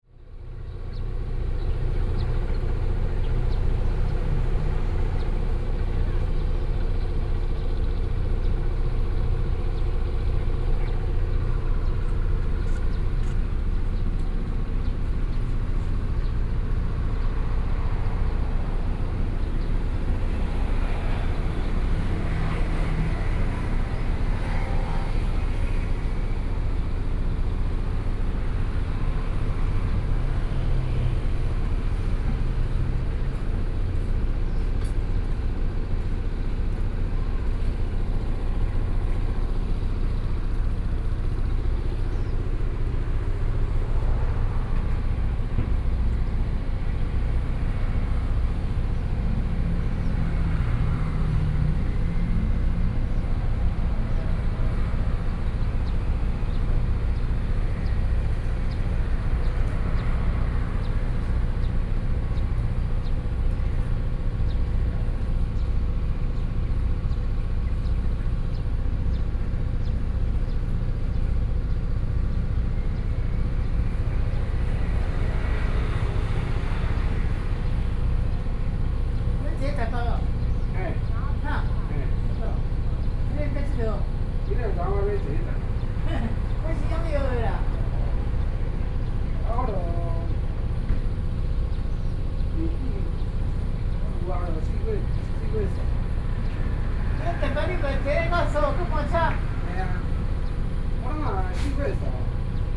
Next to the pier, In the bus station, Traffic Sound, Hot weather